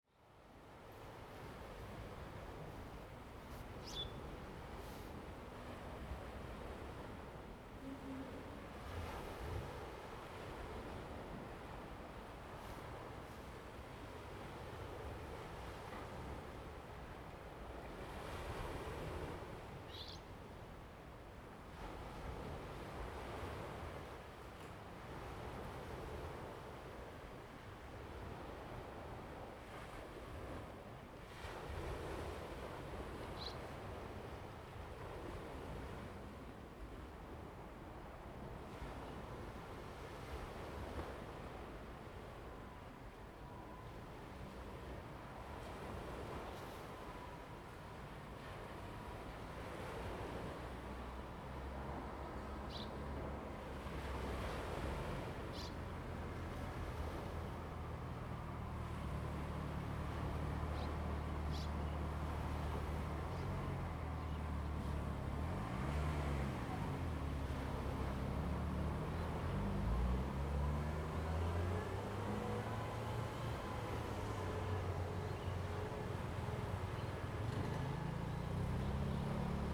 嘉和, Jiahe, Fangshan Township - In the village of Haiti
In the village of Haiti, traffic sound, Sound of the waves, birds sound
Zoom H2N MS+ XY
Pingtung County, Taiwan, 24 April 2018